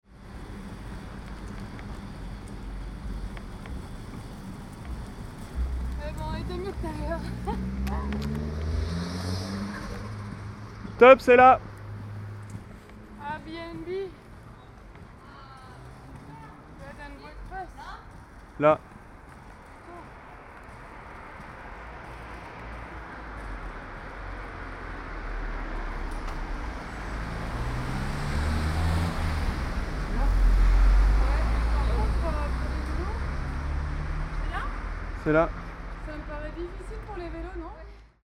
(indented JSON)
{
  "title": "Newhaven, Sussex de l'Est, Royaume-Uni - BINAURAL Arriving at B&B",
  "date": "2013-08-08 23:29:00",
  "description": "BINAURAL RECORDING (have to listen with headphones!!)\nWe are arriving at night at the B&B with our bikes",
  "latitude": "50.79",
  "longitude": "0.05",
  "altitude": "25",
  "timezone": "Europe/London"
}